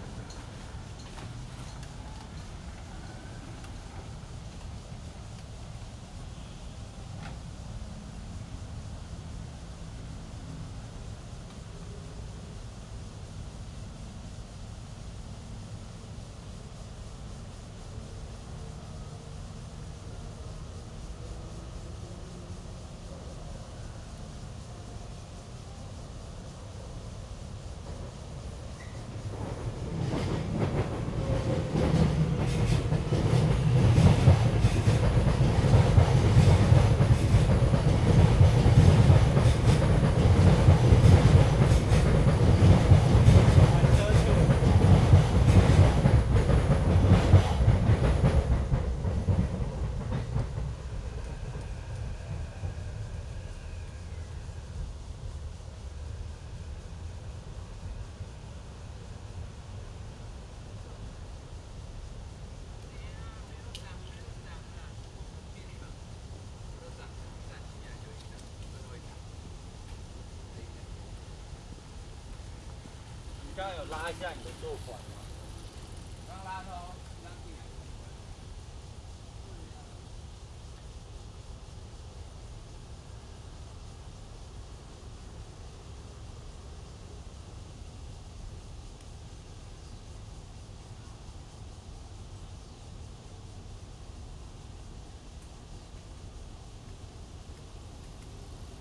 淡水區, New Taipei City, Taiwan - in the woods
motorcycle, Traffic Sound, MRT trains through, Bicycle sound, In the next MRT track
Zoom H2n MS+XY +Spatial Audio
Tamsui District, New Taipei City, Taiwan, 25 August 2016